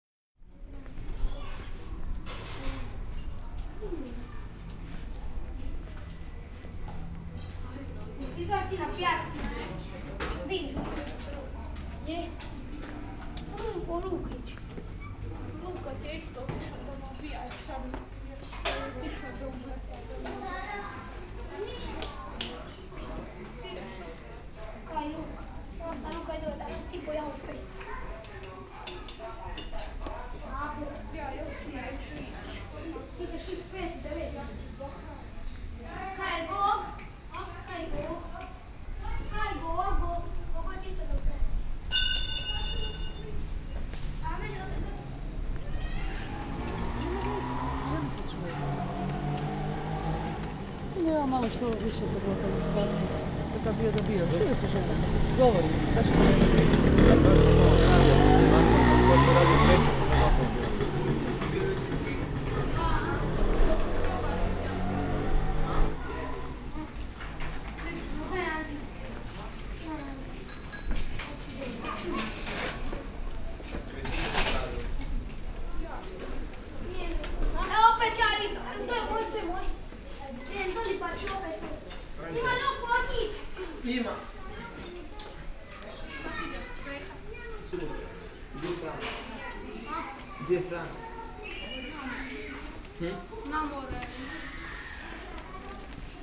sounds in a narrow Dalmatian street - everyday life
childrens' voices, sounds coming from an open window
Croatia, August 1996